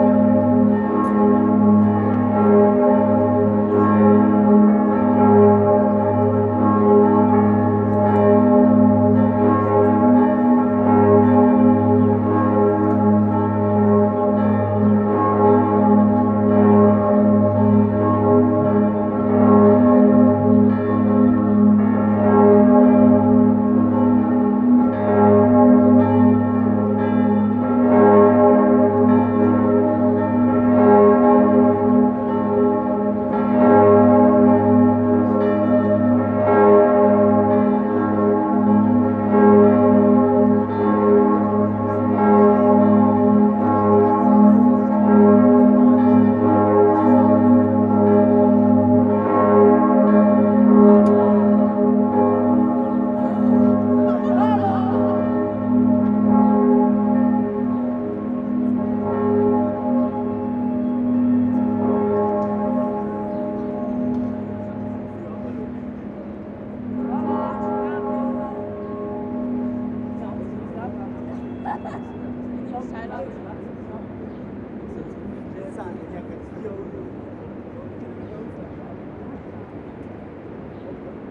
Cathedral, Bern, Switzerland - Church bells at noon from the top of the Cathedral of Bern
Church Bells at noon from the top of the Cathedral of Bern (Switzerland)
Recorded by an ORTF setup Schoeps CCM4 x 2
On a MixPre6 Sound Devices
Recorded on 24th of Feb. 2019 at 12:00
Sound Ref: CH-190224-04
24 February 2019, 12:00